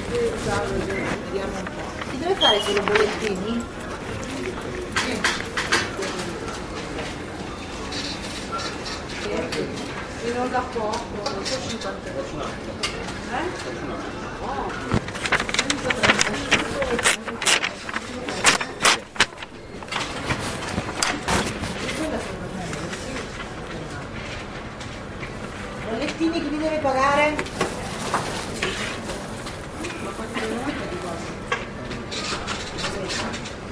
via Spagliardi, Parabiago, Posta
posta (settembre 2007)